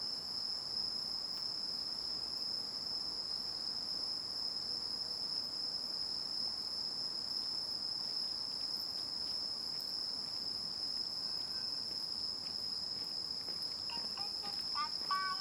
대한민국 서울특별시 서초구 우면동 627-1 - Rural Area, Umyeon-dong, Night
Rural Area, Umyeon-dong, Night, automobile passing by
우면동 주택가, 밤, 오토바이
3 October 2019, ~22:00